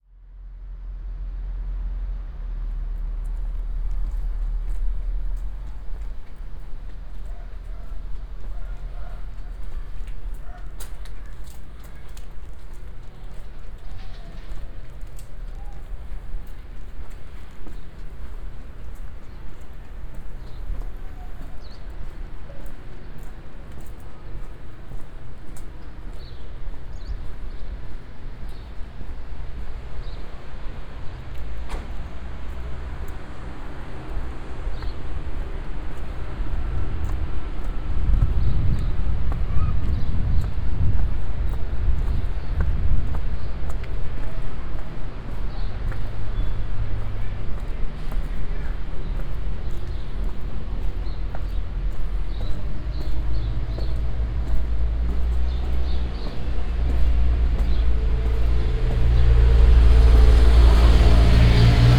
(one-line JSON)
{"title": "Cuenca, Cuenca, España - #SoundwalkingCuenca 2015-11-27 A soundwalk through the San Antón quarter, Cuenca, Spain", "date": "2015-11-27 12:54:00", "description": "A soundwalk through the San Antón quarter in the city of Cuenca, Spain.\nLuhd binaural microphones -> Sony PCM-D100", "latitude": "40.08", "longitude": "-2.14", "altitude": "937", "timezone": "Europe/Madrid"}